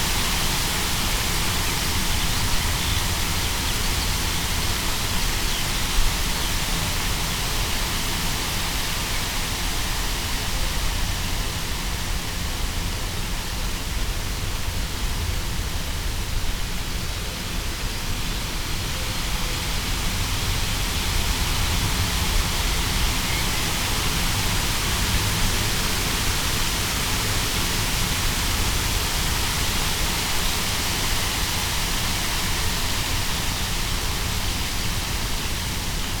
recorded on a field road, in front of a few big willows, on a windy day. (roland r-07)